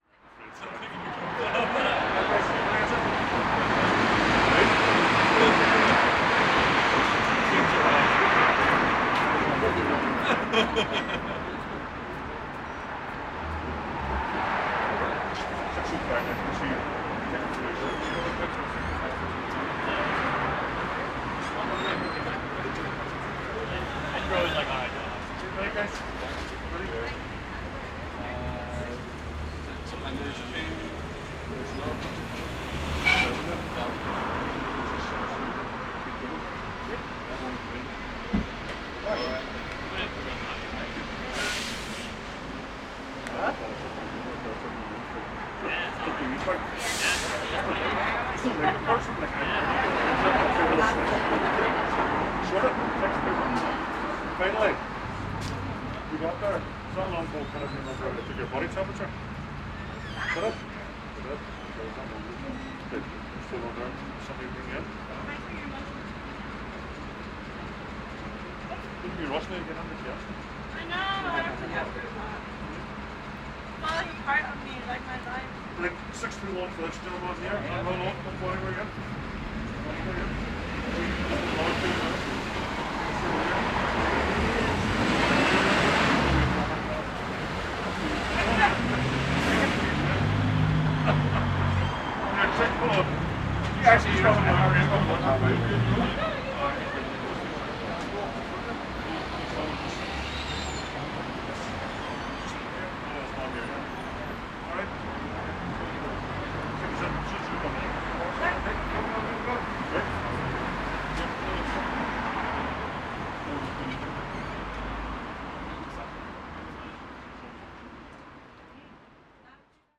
Recording in front of Laverys Bar, security guard controlling the queue of people entering the bar, security taking the temperature of people before entering, passerby, chatter, opening and closing of the bar doors. This is a day before Lockdown 2 in Belfast.